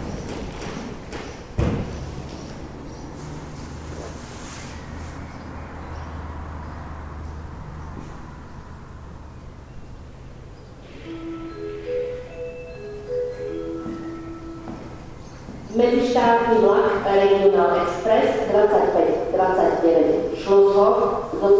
train station in devinska nova ves
announcements and ambience at the station
30 August 2010, 16:00